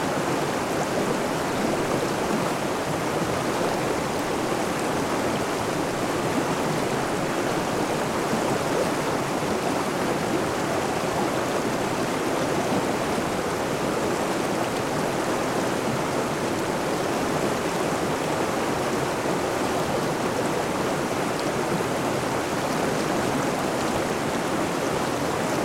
Laguna Negra, Picos de Urbion - Queda de agua, Laguna Negra
Queda de agua na Laguna Negra em Picos de Urbion. Mapa Sonoro do rio Douro. Waterfall at Laguna Negra, Picos de Urbion. Douro River Sound Map.
Covaleda, Soria, Spain, 16 April 2013